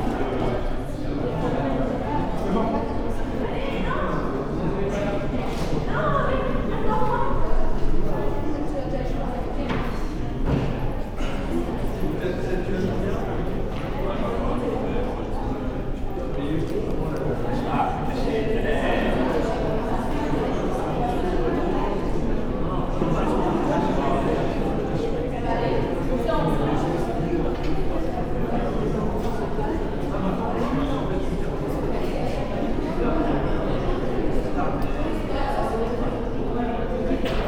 Quartier des Bruyères, Ottignies-Louvain-la-Neuve, Belgique - Corridor discussions
In the wide hall of the criminology school, people are discussing.
Ottignies-Louvain-la-Neuve, Belgium, 11 March 2016